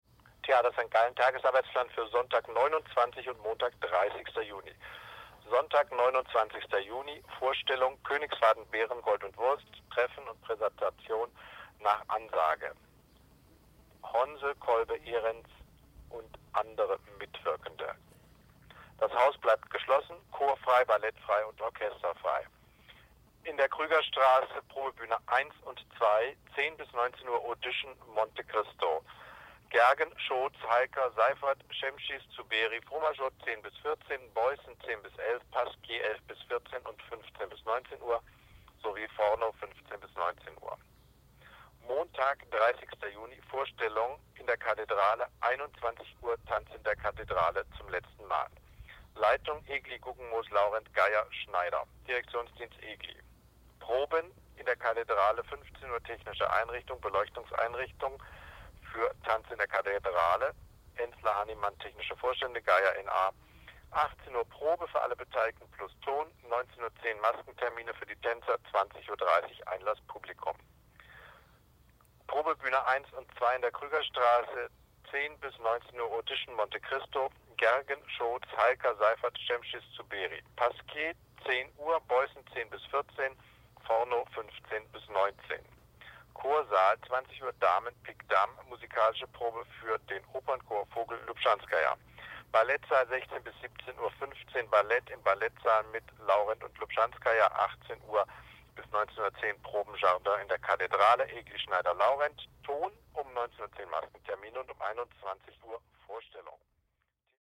theatre st. gallen
automatic daily announcement about schedule for shows and rehearsals.
recorded june 30th, 2008.
project: "hasenbrot - a private sound diary"
Saint Gallen, Switzerland